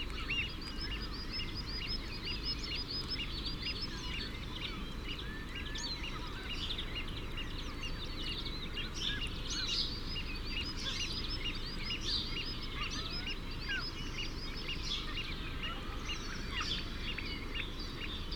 {
  "title": "Isle of Mull, UK - early morning lochan ... with mew gulls ...",
  "date": "2009-04-29 05:30:00",
  "description": "early morning lochan ... with mew gulls ... fixed parabolic to minidisk ... bird calls ... song from ... mew gulls ... curlew ... redshank ... oystercatcher ... common sandpiper ... greylag goose ... mallard ... skylark ... great tit ... chaffinch ... background noise ... some traffic ...",
  "latitude": "56.58",
  "longitude": "-6.19",
  "altitude": "3",
  "timezone": "Europe/London"
}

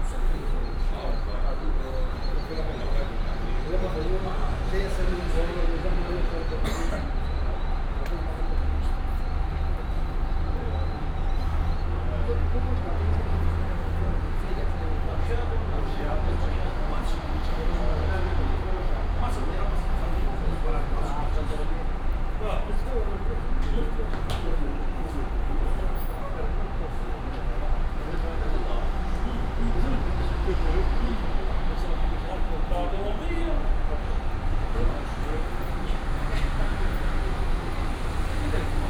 {
  "title": "Funchal, Rodoeste bus terminal - drivers talking",
  "date": "2015-05-08 07:53:00",
  "description": "(binaural) bus drivers talking before taking off towards their destination. their buses idling behind them.",
  "latitude": "32.65",
  "longitude": "-16.90",
  "altitude": "51",
  "timezone": "Atlantic/Madeira"
}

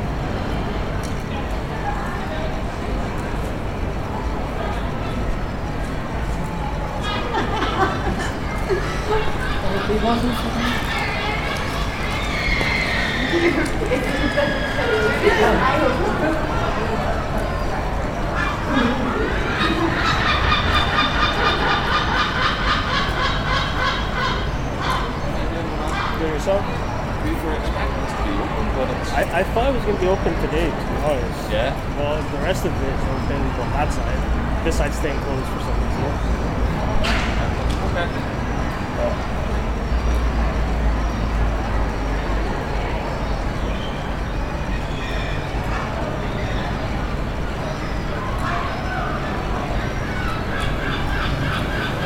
{
  "title": "Commercial Court",
  "date": "2020-07-04 18:00:00",
  "description": "Another transitional recording from being observational to interactive with locals who were asking me (a guy with a recorder, dressed for warmth, tripods, and wires) about the information on the bars in the area. I did find it amusing talking with the couple and also confusing when half of the area’s bars were open (later to find out only bars that served food can reopen). It is bizarre to compare one half of the area to another; this area usually thrives with foot and taxi traffic since it is one of the highlights of the city. It shows that we are not at a point where anything is returning to normal any time soon. Also, worth mentioning, this was the first weekend that bars and pubs were allowed to reopen (note: only that served food), so surprising to see that there were still people heading out for the night.",
  "latitude": "54.60",
  "longitude": "-5.93",
  "altitude": "6",
  "timezone": "Europe/London"
}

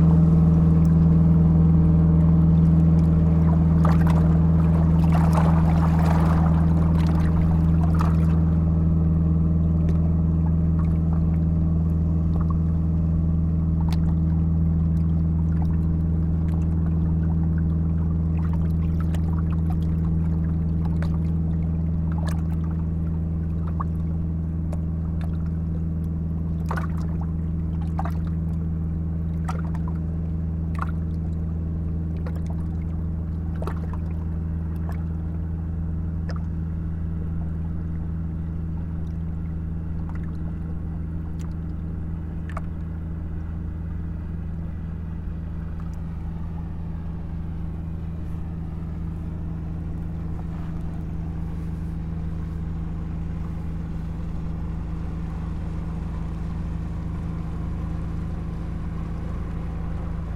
{
  "title": "Beersel, Belgique - Barges",
  "date": "2016-08-13 13:40:00",
  "description": "Three barges passing by on the Brussels to Charleroi canal.",
  "latitude": "50.76",
  "longitude": "4.27",
  "altitude": "26",
  "timezone": "Europe/Brussels"
}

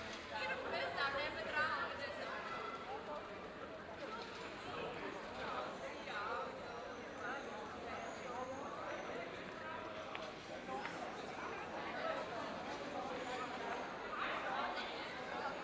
{
  "title": "Aarau, Kirchplatz, Brass Band, Schweiz - Brass Band 1",
  "date": "2016-07-01 10:50:00",
  "description": "After the Maienzug, people are strolling through the city, listening to bands, which are playing now rather light tunes.",
  "latitude": "47.39",
  "longitude": "8.04",
  "altitude": "381",
  "timezone": "Europe/Zurich"
}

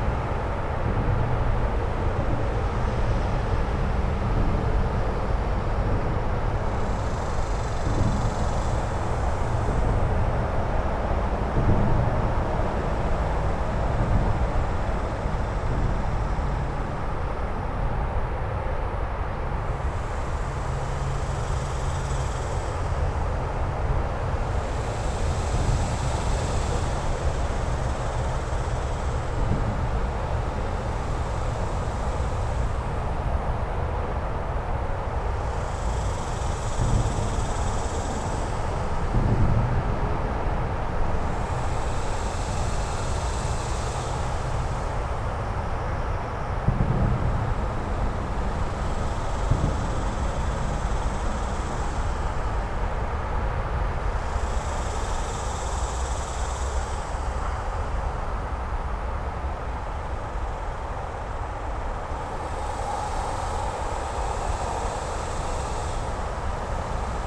{
  "title": "N Mopac Expy, Austin, TX, USA - Beneath the northbound 183 flyover",
  "date": "2020-07-18 10:32:00",
  "description": "Recorded with an Olympus LS-P4 and a pair of LOM Usis hung from tree branches. This is a space that is mostly inaccessible. The voices of the cicadas are very strong; they are drowned out by the overwhelming thrum of traffic but are in a different frequency range so still audible.",
  "latitude": "30.38",
  "longitude": "-97.74",
  "altitude": "236",
  "timezone": "America/Chicago"
}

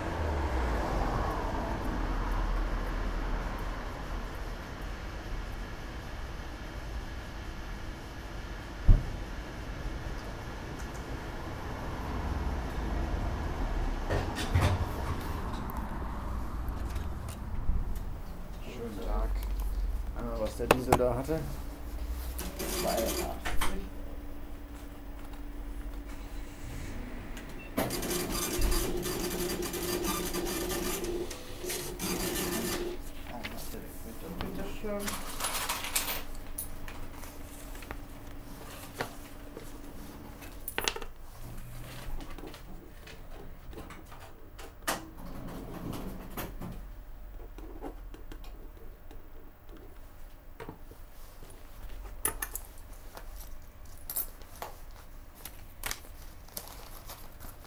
{
  "title": "unna, ostring, gas station",
  "description": "at the 24 hour gas station, filling in gasoline, payment inside the shop\nsoundmap nrw - social ambiences and topographic field recordings",
  "latitude": "51.53",
  "longitude": "7.69",
  "altitude": "107",
  "timezone": "Europe/Berlin"
}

